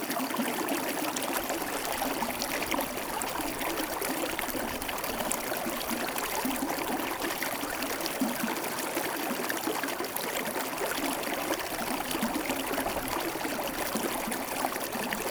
Le Pont-de-Montvert, France - Tarn spring
The Lozere Mounts. This is the Tarn spring, a few meters after its emergence.